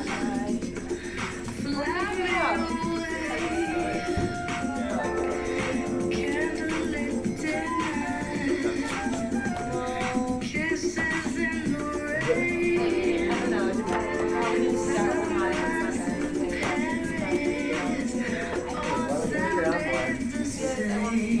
University of Colorado Boulder, Regent Drive, Boulder, CO, USA - Computer Lab
Computer Lab for students
6 February 2013